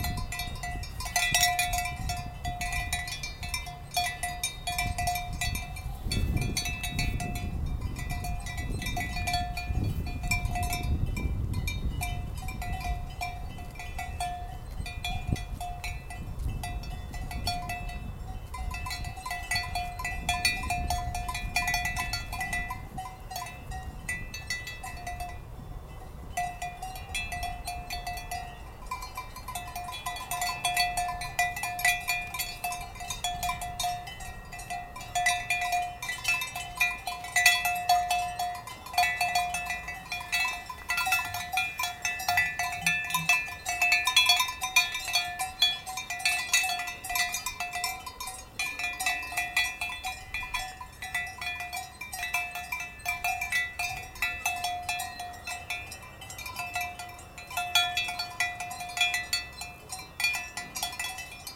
cows with bells, st. gallen
the sound of switzerland... recorded aug 30th, 2008.